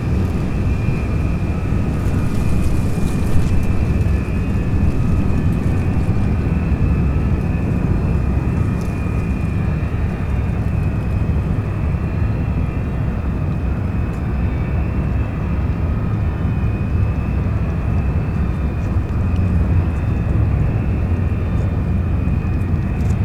berlin: plänterwald - the city, the country & me: promenade
squeaking sound of the ferris wheel in the abandonned spree park, towboat moves empty coal barges away, crows
the city, the country & me: february 8, 2014